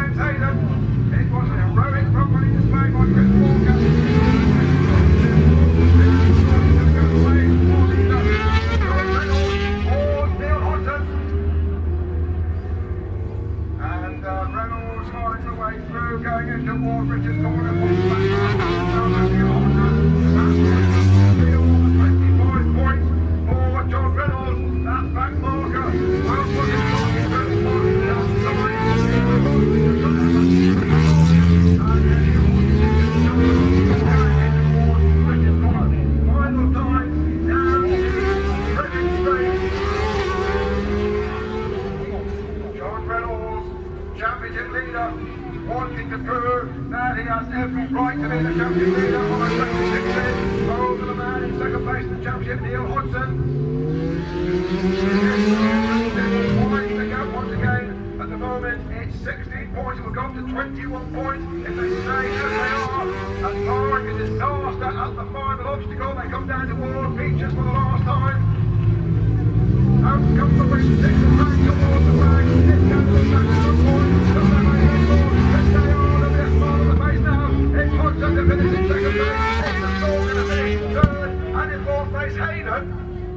Unit 3 Within Snetterton Circuit, W Harling Rd, Norwich, United Kingdom - British Superbikes 2000 ... superbikes ...
British Superbikes ... 2000 ... race two ... Snetterton ... one point stereo mic to minidisk ... time approx ...